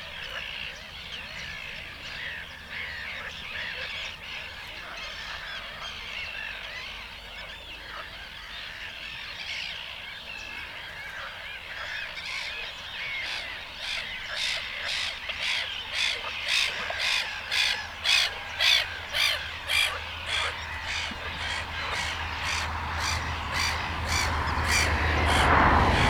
Smogulec, Zamczysko - bird island
hundreds of birds live on the artificial water reservoirs build for farming fish. On the reservoir in front of me was a little island, covered with shouting birds. After a few minutes a few of them flew towards me and started circling over me. A housing estate to the left, a car leaving, man working his grinder. (roland r-07)
powiat wągrowiecki, wielkopolskie, RP